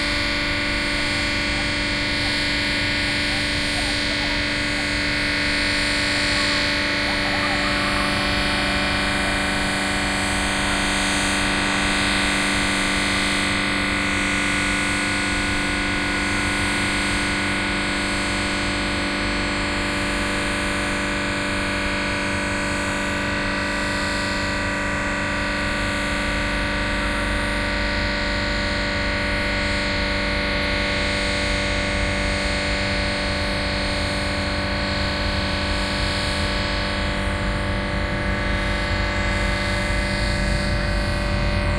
THE GRAND GREEN, Taipei - on the lawn at night
The crowd on the lawn at night, Distant electronic music, Sony PCM D50 + Soundman OKM II